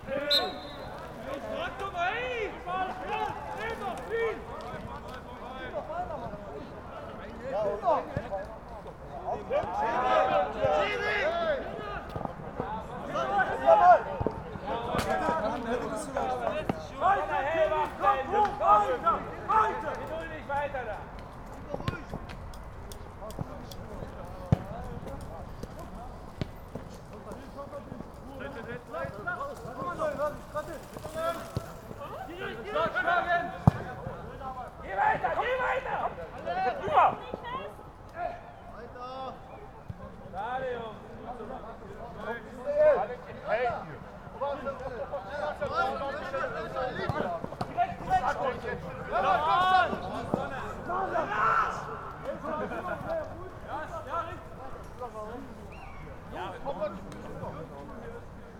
berlin, tempelhof - football match
sunday afternoon football match
Berlin, Germany